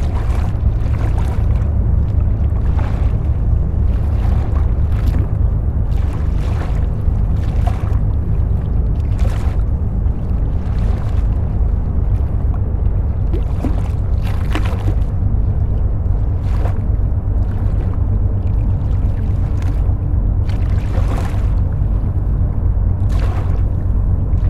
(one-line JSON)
{"title": "Normandie, France - The dredger boat", "date": "2016-07-21 11:00:00", "description": "A boat is dredging the Seine river, it makes a permanent deaf sound.", "latitude": "49.43", "longitude": "0.33", "altitude": "6", "timezone": "Europe/Paris"}